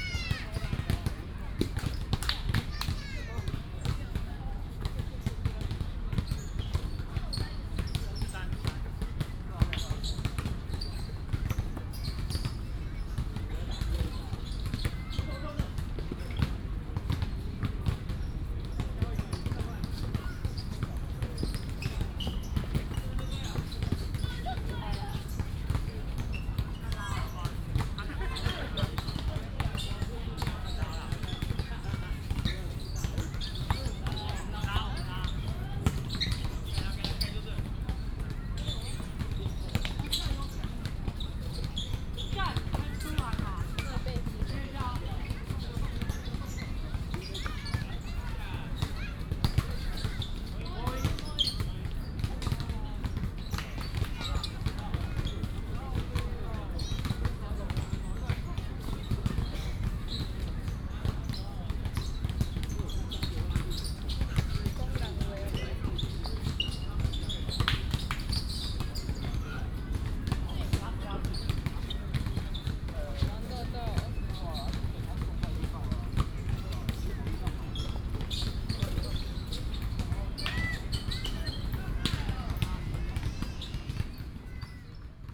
大安森林公園, 大安區 Taipei City - Next to the basketball court
Next to the basketball court, in the park